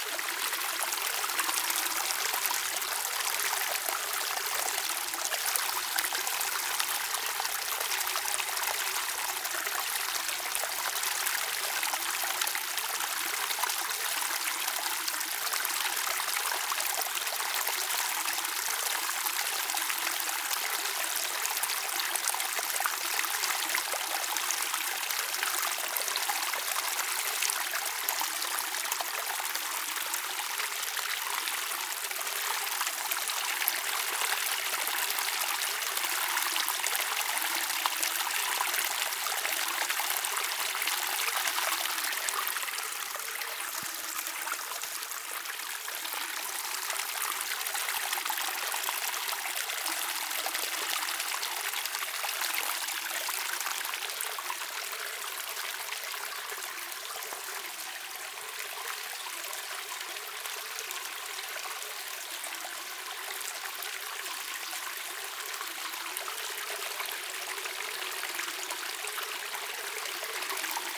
{"title": "Blatno, Czechia - Bílina", "date": "2019-08-04 12:19:00", "description": "Walking towards the source of the Bilina river. Binaural recording, soundman, zoom H2n", "latitude": "50.54", "longitude": "13.33", "altitude": "776", "timezone": "Europe/Prague"}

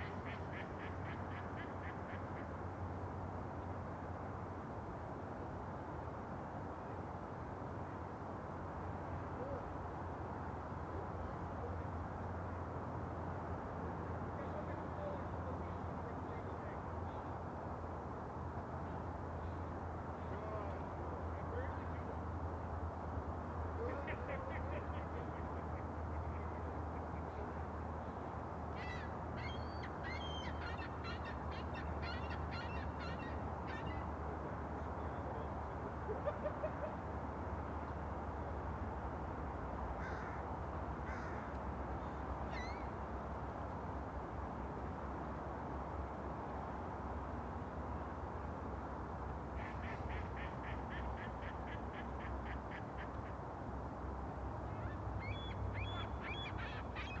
{"title": "Greenlake, Seattle - Greenlake in Winter", "date": "2002-12-23 13:40:00", "description": "Greenlake, in the center of north Seattle, is very popular with joggers, bicyclists, roller bladers, skate boarders and dog walkers in summertime, but in the dead of winter it's almost perfectly still. Only the stoutest venture out in sub-freezing weather like this. I'm not one of them: I quit recording after 38 minutes.\nMajor elements:\n* Mallards, seagulls, crows and one bald eagle wearing a stocking cap\n* A few hearty joggers\n* A Park Dept. employee (he had to be there)\n* Small planes and larger jets on approach to SeaTac\n* The everpresent rumble of Highway 99", "latitude": "47.68", "longitude": "-122.33", "altitude": "52", "timezone": "America/Los_Angeles"}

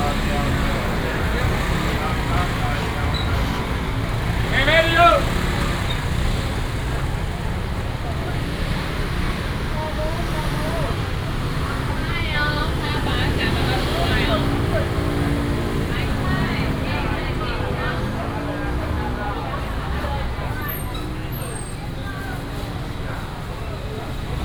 {"title": "Gonghe Rd., East Dist., Chiayi City - Walking in the traditional market", "date": "2017-04-18 09:47:00", "description": "Walking in the traditional market, Traffic sound, Many motorcycles", "latitude": "23.48", "longitude": "120.46", "altitude": "42", "timezone": "Asia/Taipei"}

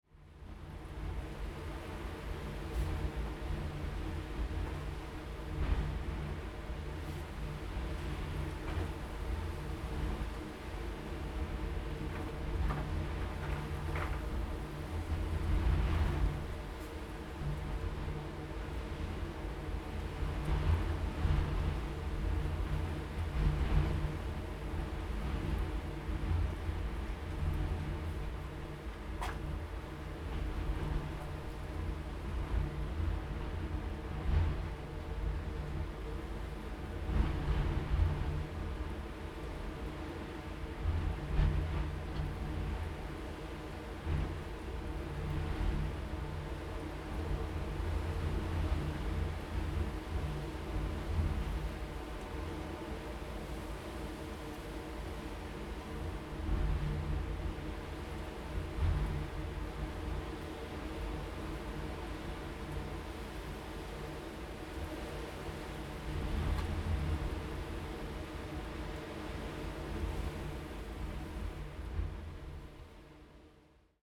{"title": "西嶼東臺, Xiyu Township - Abandoned bunker", "date": "2014-10-22 14:13:00", "description": "Abandoned bunker, Wind\nZoom H2n MS+XY", "latitude": "23.57", "longitude": "119.51", "altitude": "48", "timezone": "Asia/Taipei"}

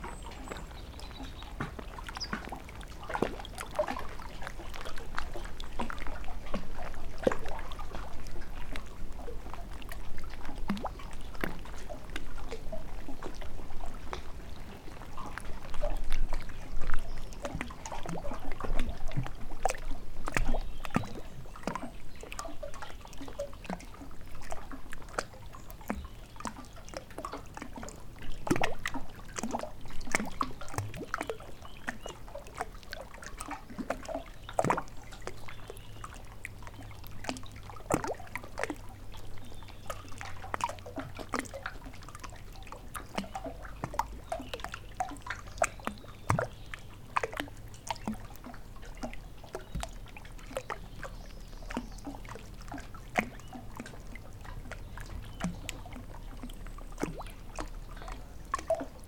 {"title": "Valonsader, Soria, Spain - Casa submersa - Submerged house", "date": "2017-02-14 12:30:00", "description": "Casa submersa no rio Douro. Monte Valonsadero em Soria, Spain. An underwater house in the Douro river. Monte Valonsadero, Soria, Spain. Recorded in February, 2017.", "latitude": "41.84", "longitude": "-2.54", "altitude": "1038", "timezone": "Europe/Madrid"}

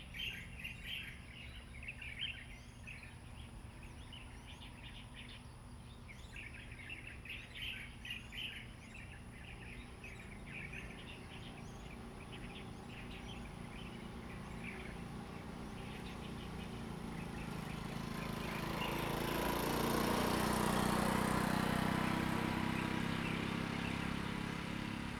{"title": "杉福村, Hsiao Liouciou Island - Birds singing", "date": "2014-11-02 08:03:00", "description": "Birds singing, Traffic Sound\nZoom H2n MS+XY", "latitude": "22.34", "longitude": "120.36", "altitude": "12", "timezone": "Asia/Taipei"}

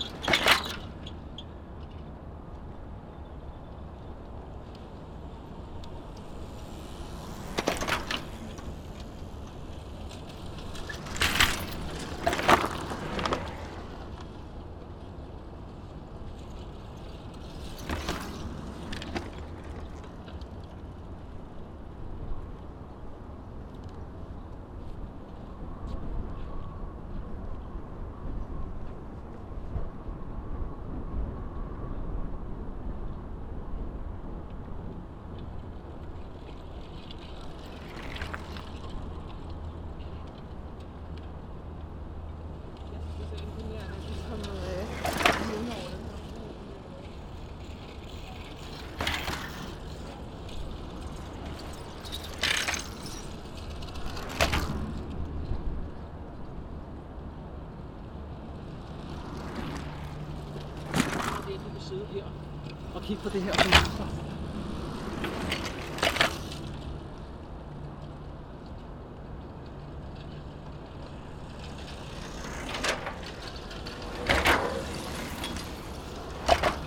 {"title": "København, Denmark - Denmark, country of the bikes", "date": "2019-04-15 07:30:00", "description": "During the rush hour, no need to search the cars, there's very few. In Copenhagen, the rush hour is simply a downpour of bikes. It's of course very pleasant. Sound of the bikes on a pedestian and cycling bridge.", "latitude": "55.66", "longitude": "12.57", "altitude": "3", "timezone": "Europe/Copenhagen"}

